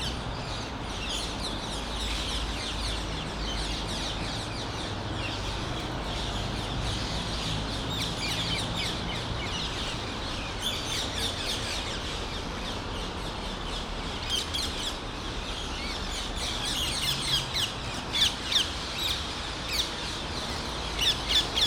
Am Leystapel, Thunmarkt, Köln - rush hour /w Rose-ringed parakeet
gathering place of Rose-ringed parakeets (Halsbandsittich, Kleiner Alexandersittich, Psittacula krameri). There are thousands living in Cologne. At this place near a busy road, hundreds of them gathering in a few trees in the evening.
(Sony PCM D50)
12 September 2019, 19:55